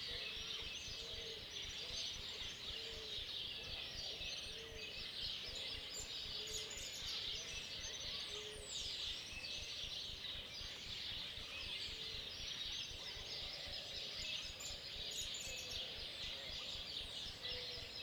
LM Coronel Segui, Provincia de Buenos Aires, Argentina - Very early in the morning, only sounds, no traffic much less humans
October 12th beautiful morning, dawn and birds. Mud birds. Lambs. No traffic. Only Sounds
12 October 2021, 17:04